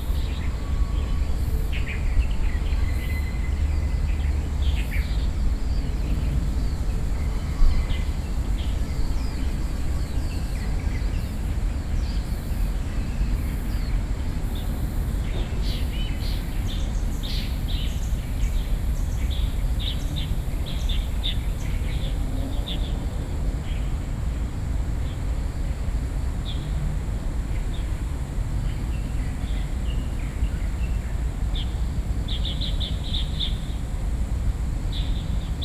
Lane, Section, Zhōngyāng North Rd, Beitou District - in the morning
Taipei City, Taiwan, 8 November